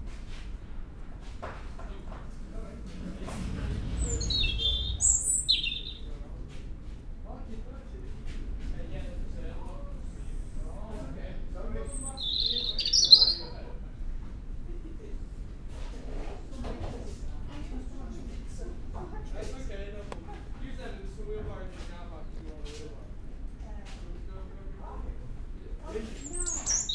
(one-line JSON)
{"title": "Reighton Nursery, Hunmanby Rd, Filey, United Kingdom - resident robin singing ...", "date": "2019-03-15 11:20:00", "description": "resident robin singing ... Reighton Nurseries ... the bird is resident and sings in the enclosed area by the tills ... it is not the only one ... lavalier mics clipped to bag ... it negotiates the sliding doors as well ... lots of background noise ... voices etc ...", "latitude": "54.16", "longitude": "-0.28", "altitude": "110", "timezone": "Europe/London"}